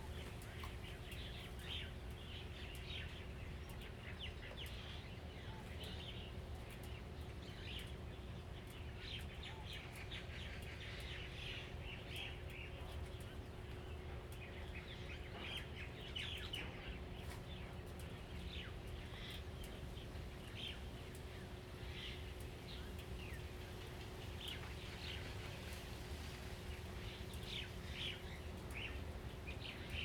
Birds singing, Traffic Sound, In the Bus station, Dogs barking
Zoom H2n MS+XY
4 November 2014, 08:16